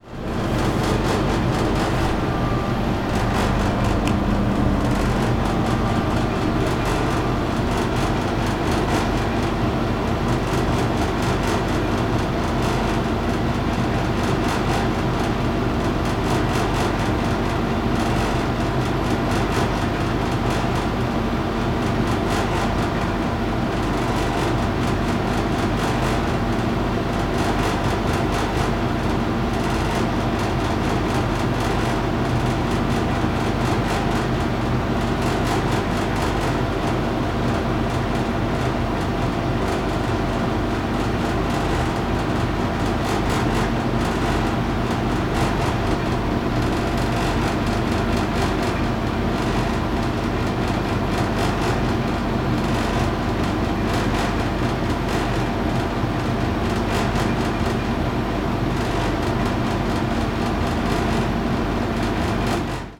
Poznan, Grand Theater - vending machine
growling of a snacks vending machine (sony d50)